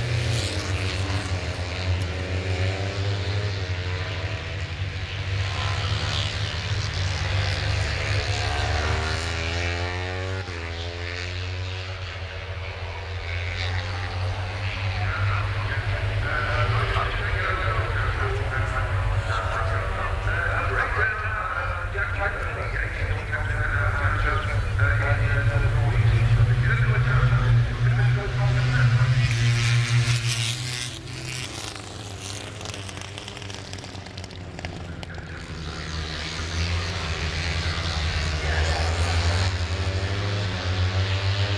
Lillingstone Dayrell with Luffield Abbey, UK - british motorcycle grand prix 2013
moto3 warmup 2013 ... lavalier mics ...